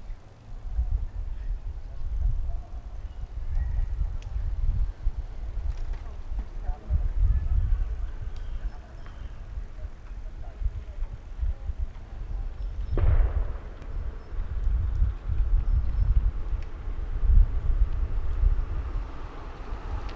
Langel Binnenwasser, Köln, Deutschland - Sonntags in der Sonne / Sunday in the sun
Sonntags bei 20 ° C und Sonne, einer der ersten schönen Frühlingstage.
Am Totarm des Rheins, dem sogenannten "Binnenwasser".
Die meisten Geräusche bewegen sich hinter mir vorbei.
Vögel, ein Knall, Stimmen, ein Auto, ein Motorrad und ein Flugzeug.
Sundays at 20 ° C (68 F) and sun, one of the first beautiful spring days.
Sitting at a dead water of the Rhine, the so-called "Binnenwasser".
Most sounds passed behind me.
Birds, a bang, voices, a car, a motorcycle and an airplane.
Cologne, Germany, 9 March 2014, ~16:00